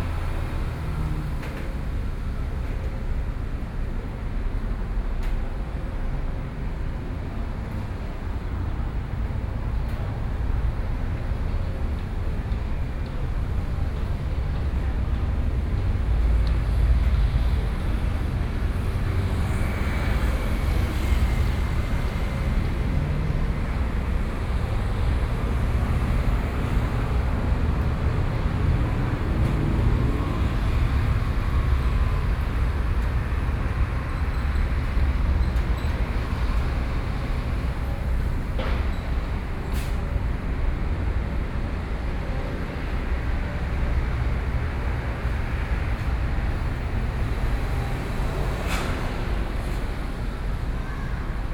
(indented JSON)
{
  "title": "進安宮, 蘇澳鎮南安里 - In the temple",
  "date": "2014-07-28 14:18:00",
  "description": "In the temple, Traffic Sound, Hot weather, Opposite the construction site",
  "latitude": "24.58",
  "longitude": "121.86",
  "altitude": "4",
  "timezone": "Asia/Taipei"
}